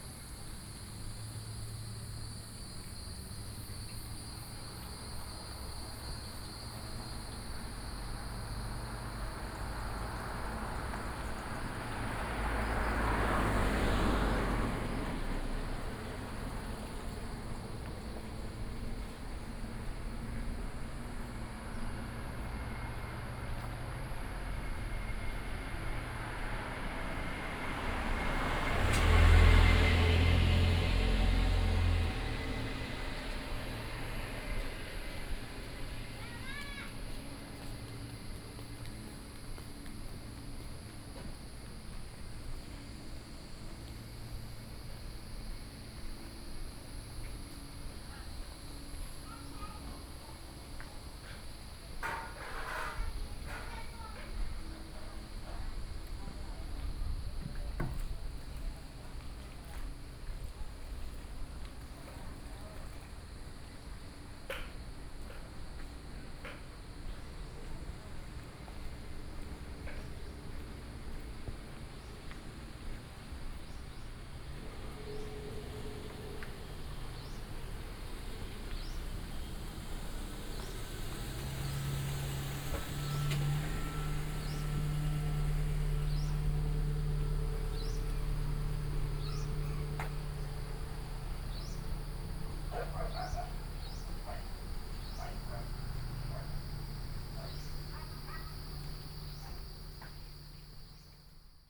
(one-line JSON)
{"title": "Taomi Ln., Puli Township, Nantou County - Walking in a small village", "date": "2015-09-03 07:41:00", "description": "Walking in a small village, Traffic Sound", "latitude": "23.94", "longitude": "120.93", "altitude": "482", "timezone": "Asia/Taipei"}